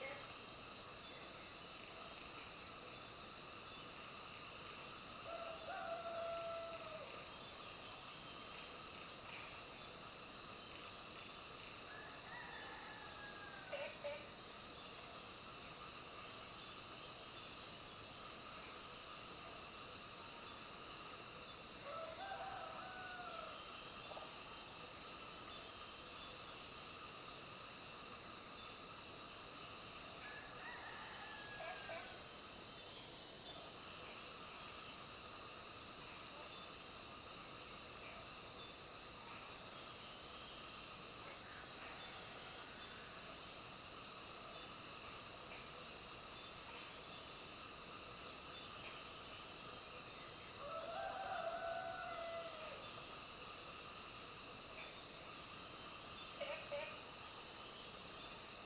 Green House Hostel, 桃米里 - Crowing sounds
Frogs chirping, Early morning, Crowing sounds
Zoom H2n MS+XY